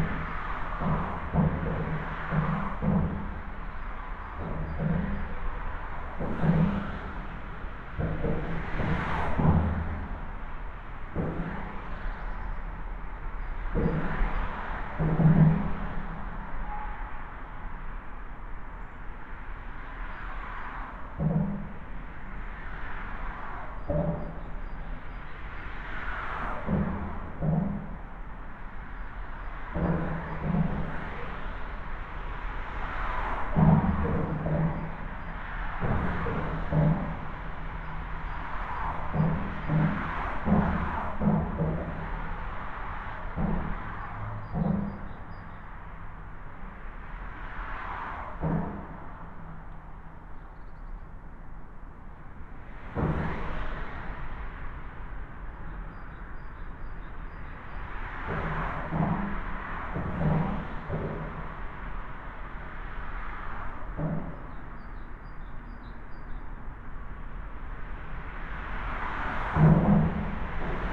Huntebrücke, Oldenburg, Deutschland - sound of traffic below lane
sound of the Autobahn traffic directly under the bridge
(Sony PCM D50)
27 February 2016, 14:00